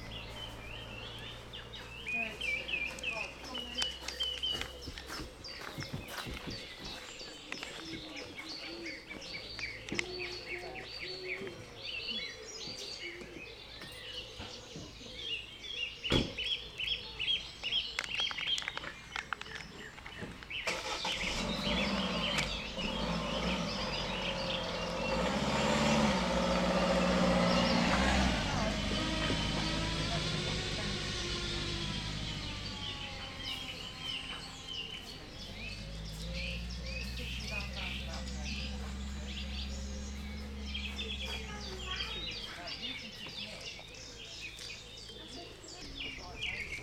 Beer, Devon, UK - outside cafe/reception at Beer caves
1 June 2012, ~15:00